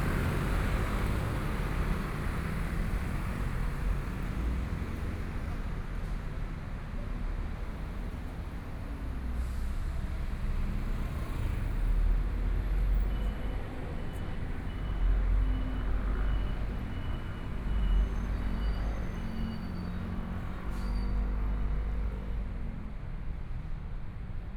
Minquan E. Rd., Songshan Dist. - walking on the Road
Walking on the road, Traffic Sound, Binaural recordings, Zoom H4n+ Soundman OKM II